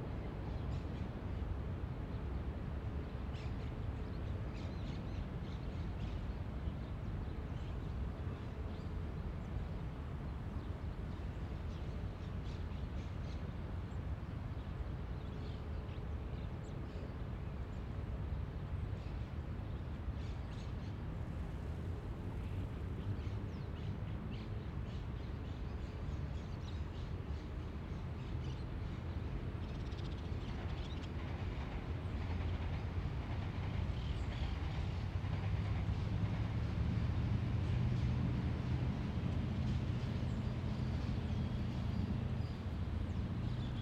Plaza de las Naciones Unidas, CABA, Argentina - Floralis
Los sonidos en los que está inmersa la Floralis Genérica.
27 June 2018, 13:00